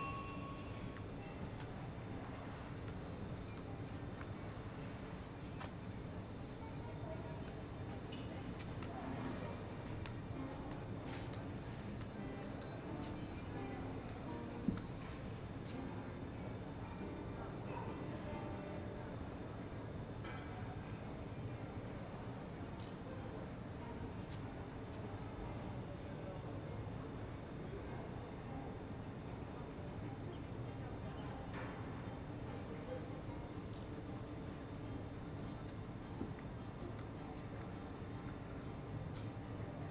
"Terrace at sunset with swallows and guitar recording in the background in the time of COVID19" Soundscape
Chapter CLXXVII of Ascolto il tuo cuore, città. I listen to your heart, city
Monday June 28th 2021. Fixed position on an internal terrace at San Salvario district Turin, More than one year and three months after emergency disposition due to the epidemic of COVID19.
Start at 9:12 p.m. end at 9:24 p.m. duration of recording 13'36'', sunset time at 09:20.